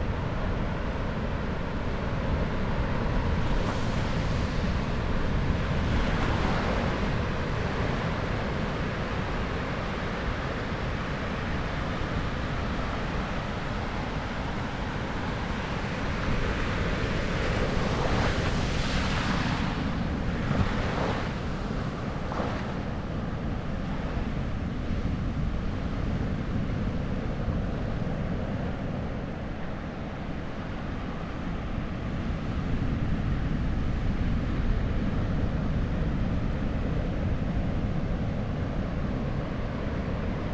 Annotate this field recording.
Same beach, same day but late in the evening. Lower tide and less wind resulting in a much weaker surf and thus less roar. Dummy head Microphopne facing seaward, about 6 meters away from the waterline. Recorded with a Sound Devices 702 field recorder and a modified Crown - SASS setup incorporating two Sennheiser mkh 20 microphones.